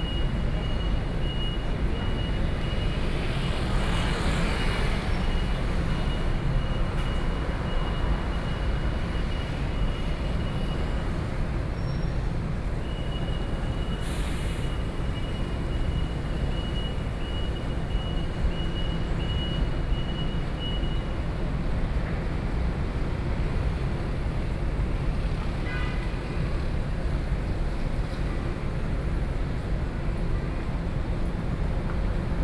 Taoyuan Station - Environmental Noise
Square in front of the station, The air-conditioned department stores noise, Traffic Noise, Sony PCM D50 + Soundman OKM II
Taoyuan County, Taiwan, 12 August, 13:25